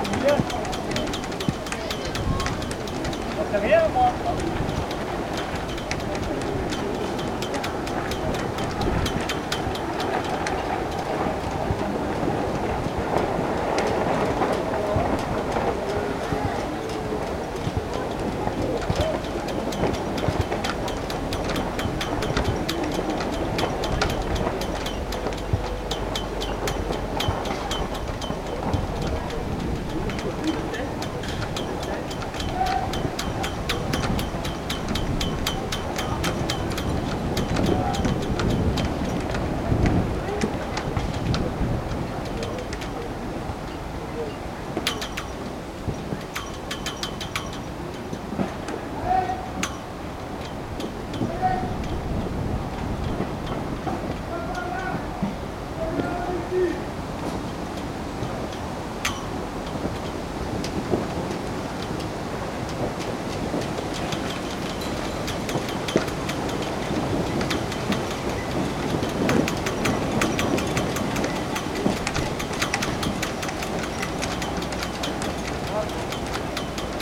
Overijse, Belgique - Strong wind
A strong wind blows in the boat matts. The sails make caracteristic noises.
Overijse, Belgium, 4 September 2016